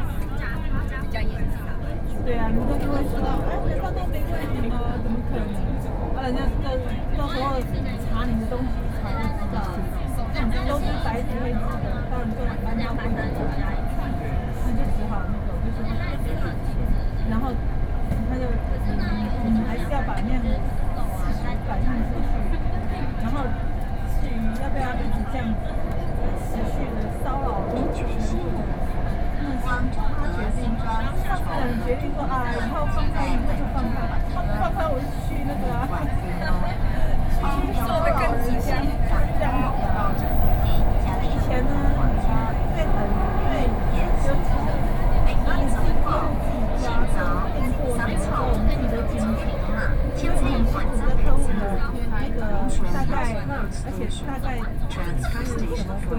Tamsui Line, Taipei City, Taiwan - In the MRT